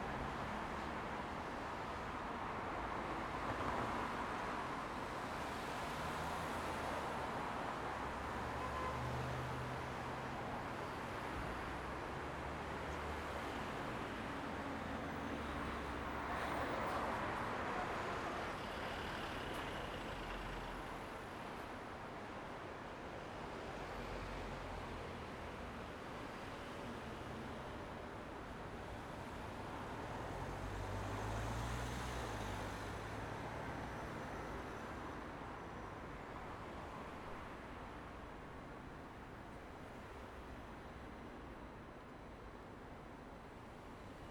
대한민국 서울특별시 서초구 교보타워사거리 - Kyobo Tower Junction
Kyobo Tower Junction, Cars and motorcycle passing by
교보타워사거리, 차도 자동차 등.
5 October 2019, ~16:00